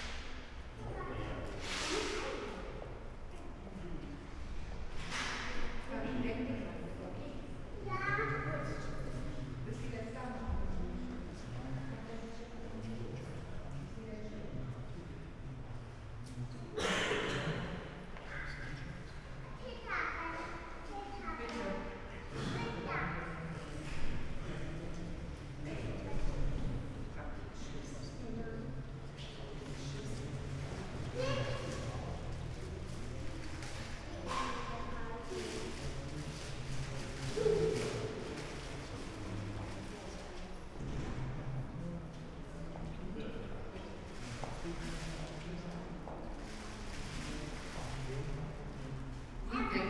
{
  "title": "Bundesbank, Leibnizstr. - Foyer ambience",
  "date": "2018-07-17 10:55:00",
  "description": "place revisited after 10 years, in order to change an amount of collected coins. Among others, kids come here to change their savings, also homeless people, bottle collectors etc.\n(Sony PCM D50, Primo EM 172)",
  "latitude": "52.51",
  "longitude": "13.32",
  "altitude": "37",
  "timezone": "GMT+1"
}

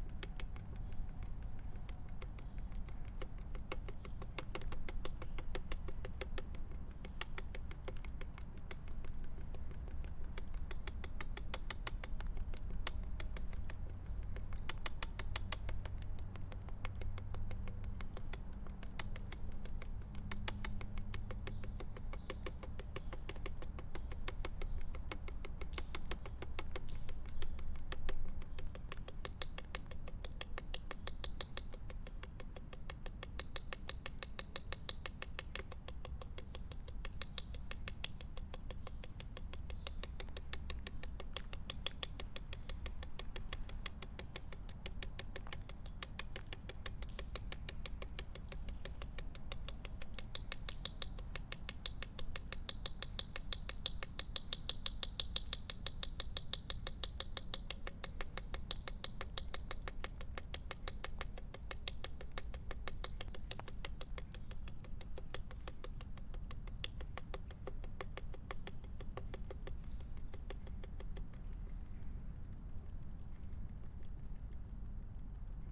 {
  "title": "Montataire, France - Abandoned factory",
  "date": "2018-07-20 11:30:00",
  "description": "In first, water drops falling from a rooftop. After, walking in the abandoned factory, on broken glass and garbage everywhere. This abandoned place is completely trashed.",
  "latitude": "49.25",
  "longitude": "2.44",
  "altitude": "30",
  "timezone": "Europe/Paris"
}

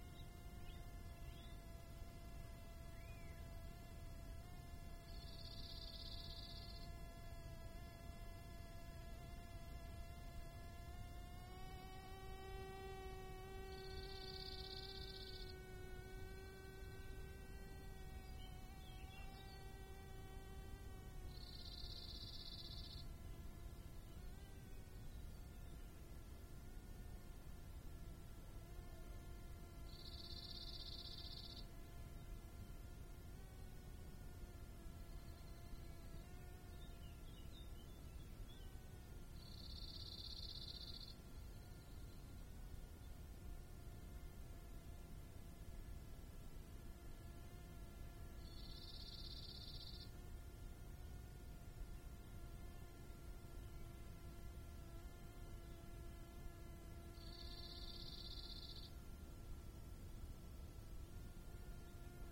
{
  "title": "Spitaki, Aloni, Mikro Papingo - Bombilyius Major vrs stridulations and strimmers",
  "date": "2017-06-22 12:23:00",
  "description": "Bombilyius Major - what a wonderful name - also known as Large Bee Fly is a bee mimic; he has a long probyscus and hums happily whilst drinking nektar. Today on our threshing floor (Aloni) on a pleasant (25C) sunny day with a slight breeze he was in chorus with a stridulating Acrida mediterranea (grasshopper) -please can someone confirm spcies and activity - and strimmer (Apostolis with his Stihl). It seems as if they all try to tune to each other and sing in harmony.\nThis was recorded using a Phonak lapel mic with low pass filter, mounted on a selfie stick with a mini Rycote windgag to an Olympus LS 14. Edited simply in Audacity: selection of fragment, fade i/o",
  "latitude": "39.97",
  "longitude": "20.73",
  "altitude": "1014",
  "timezone": "Europe/Athens"
}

